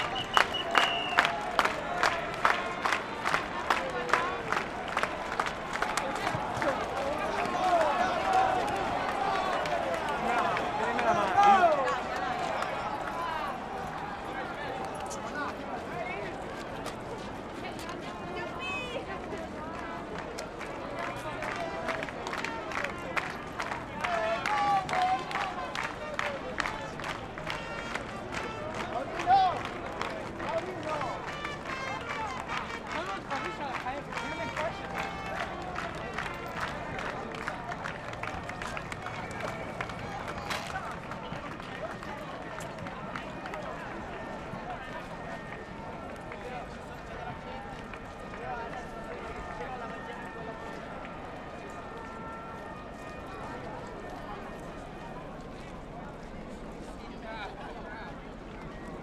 Piazza S.Giovanni
A trumpet starts to play...